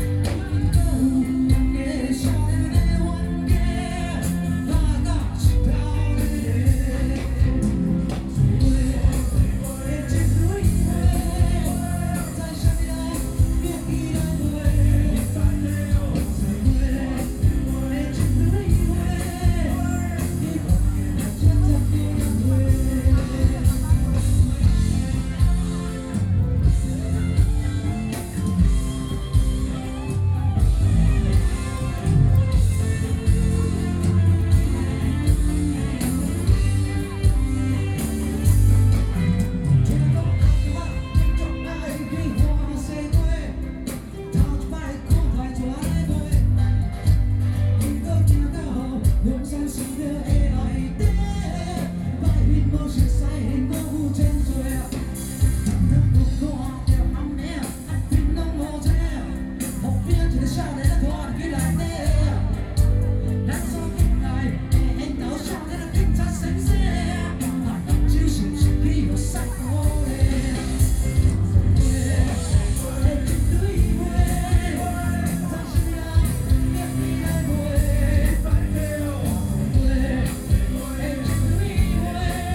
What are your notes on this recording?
anti-nuclear protesters, Former Vice President speech, Sony PCM D50 + Soundman OKM II